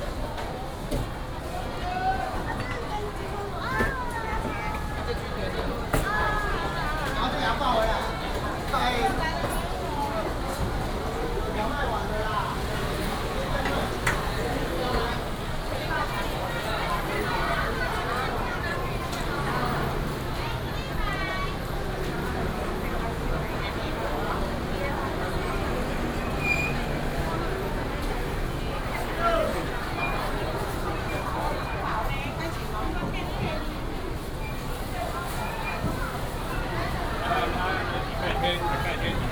{"title": "鳳山工協市場, Kaohsiung City - Walking in the traditional market", "date": "2018-03-30 09:43:00", "description": "Walking in the traditional market", "latitude": "22.63", "longitude": "120.37", "altitude": "18", "timezone": "Asia/Taipei"}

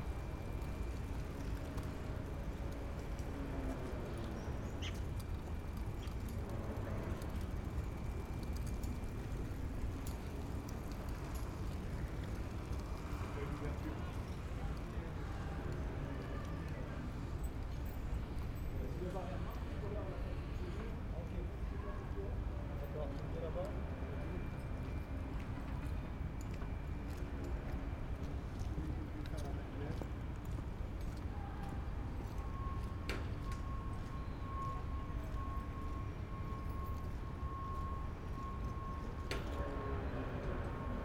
{"title": "Rue de lArmide, La Rochelle, France - Passerelle toute neuve & Confinement 2", "date": "2020-11-12 14:17:00", "description": "En début d'après midi, piétons, cyclistes et vélos circulent dans un calme remarquable lorsque la passerelle tout récemment refaite s'ouvre.....passent 2 catamarans, puis la passerelle se referme.... Belle écoute!\n4xDPA4022, cinela cosi et Rycotte, SD_MixPré6", "latitude": "46.15", "longitude": "-1.15", "altitude": "1", "timezone": "Europe/Paris"}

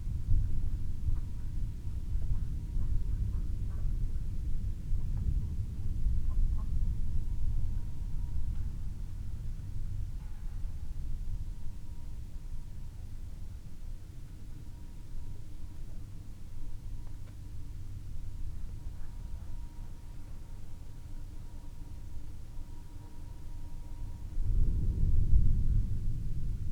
{"title": "Chapel Fields, Helperthorpe, Malton, UK - moving away thunderstorm ...", "date": "2020-06-26 23:04:00", "description": "moving away thunderstorm ... xlr SASS on tripod to Zoom H6 ... dogs ... ducks ... voices in the background ...", "latitude": "54.12", "longitude": "-0.54", "altitude": "77", "timezone": "Europe/London"}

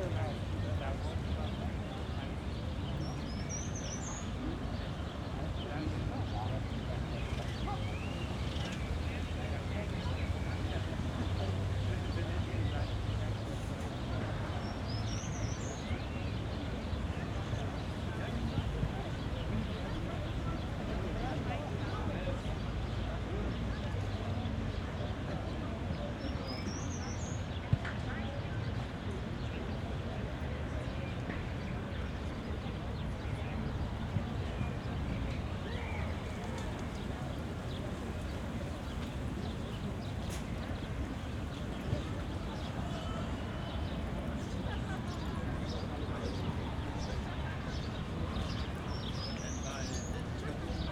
{"title": "Urbanhafen, Kreuzberg, Berlin, Deutschland - Sunday evening ambience", "date": "2015-05-10 18:25:00", "description": "Berlin, Urbahnhafen, Landwehrkanal, sunny Sunday evening ambience at the canal.\n(SD702, AT BP4025)", "latitude": "52.50", "longitude": "13.41", "altitude": "34", "timezone": "Europe/Berlin"}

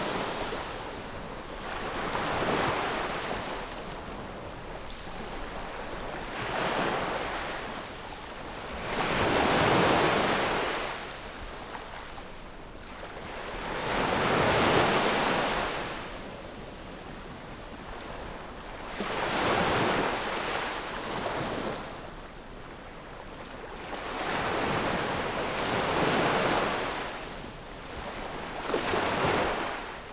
{"title": "芹壁村, Beigan Township - At the beach", "date": "2014-10-15 12:12:00", "description": "Sound of the waves, At the beach\nZoom H6 +Rode NT4", "latitude": "26.23", "longitude": "119.98", "altitude": "14", "timezone": "Asia/Taipei"}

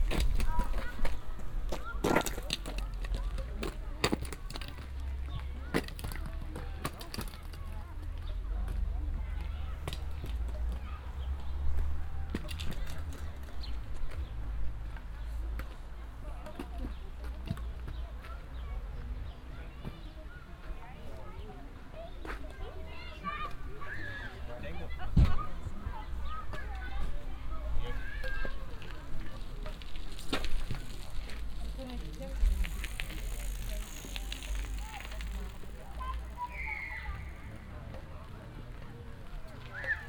{"title": "enscherange, camping areal", "date": "2011-08-03 16:30:00", "description": "Walking around in the camping areal of the small town. Children and grown ups walking around or playing badmington or other games. kids on bicycles passing by, a zipper of a tent opening.\nEnscherange, Campingareal\nUmherlaufend im Campingareal der kleinen Ortschaft. Kinder und Jugendliche laufen umher oder spielen Badminton oder andere Spiele. Kinder auf Fahrrädern fahren vorbei, ein Reißverschluss von einem Zelt öffnet sich.\nEnscherange, terrain de camping\nPromenade dans le terrain de camping de la petite ville. Des enfants et adolescents se promènent ou jouent au badminton et d’autres jeux. Des enfants passent en vélo, le bruit de la fermeture éclair d’une tente.\nProject - Klangraum Our - topographic field recordings, sound objects and social ambiences", "latitude": "50.00", "longitude": "5.99", "altitude": "304", "timezone": "Europe/Luxembourg"}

